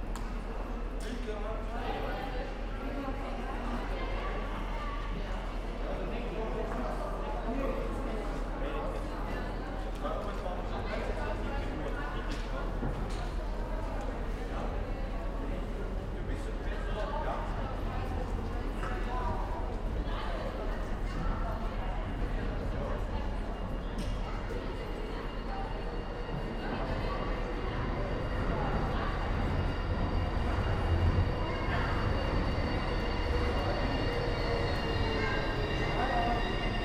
Glides of tram wheels and people.
Recording gear: Zoom F4 field recorder, LOM MikroUsi Pro.
Thüringen, Deutschland